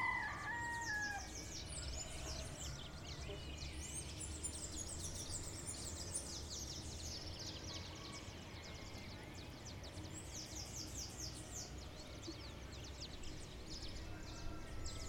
Paz de Ariporo, Casanare, Colombia - Amanecer en La PAz de Ariporo.
AVes y Gallos cerca del lugar de hospedaje.
June 2013